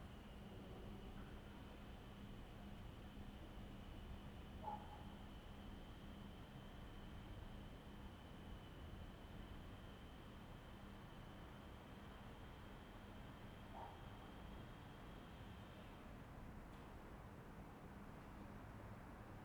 "Winter Sunny Sunday, reading book on terrace with radio in the time of COVID19": soundscape.
Chapter CLV of Ascolto il tuo cuore, città. I listen to your heart, city
Sunday January 31th 2021. Fixed position on an internal terrace at San Salvario district Turin, reading “Répertoire des effets sonores”: at the end RAI RadioTre transmits intersting contents about Radio and live concerts in the pandemic era. Almost three months of new restrictive disposition due to the epidemic of COVID19.
Start at 00:35 P.m. end at 01:38 p.m. duration of recording 01:03:22
Torino, Piemonte, Italia, 31 January 2021, ~13:00